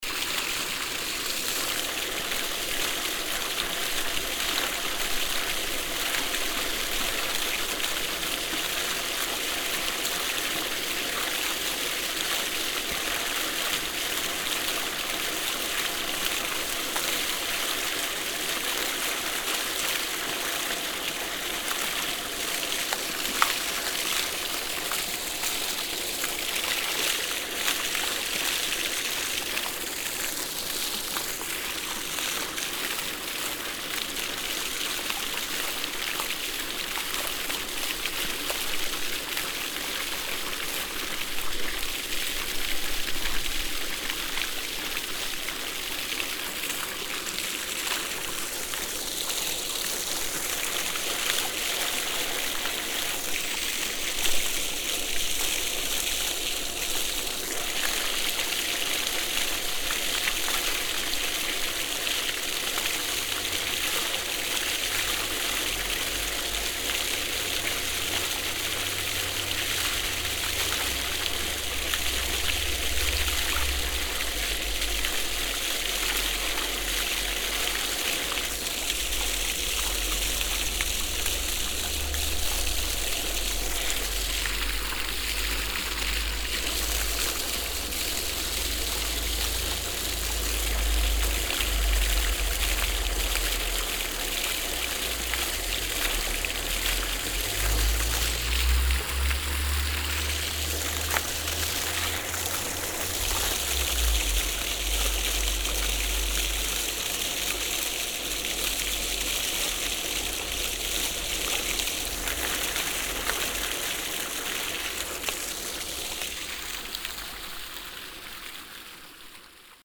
kautenbach, fountain
A small fountain placed in the village center nearby a playground and a public parking place.
Kautenbach, Brunnen
Ein kleiner Brunnen in der Dorfmitte neben einem Spielplatz und einem öffentlichem Parkplatz.
Project - Klangraum Our - topographic field recordings, sound objects and social ambiences